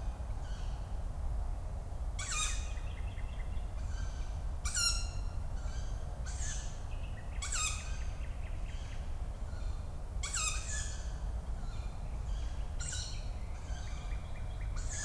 Schloßpark Buch, Berlin, Deutschland - park ambience /w Tawny owl and Nightingale
Schloßpark Berlin Buch, park ambience at night, young Tawny owls calling, a Nightingale in the background, and distant traffic noise. At 2:30 an adult is calling, and the kids are getting excited, jumping around in the trees. What to expect from city's nature?
(Sony PCM D50, DPA4060)
Wiltbergstraße, Berlin, Germany, 6 May, ~23:00